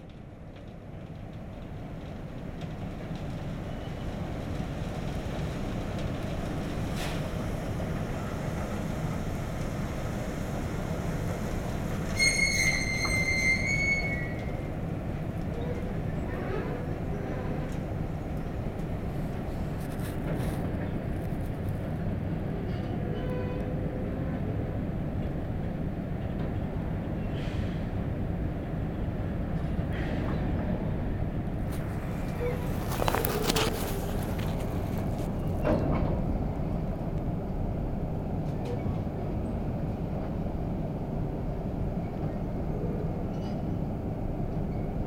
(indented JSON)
{
  "title": "Rumelange, Luxembourg - Industrial train",
  "date": "2015-05-24 20:35:00",
  "description": "Filling an entire train with cement. The train conductor was saying very bad words !",
  "latitude": "49.47",
  "longitude": "6.01",
  "altitude": "320",
  "timezone": "Europe/Luxembourg"
}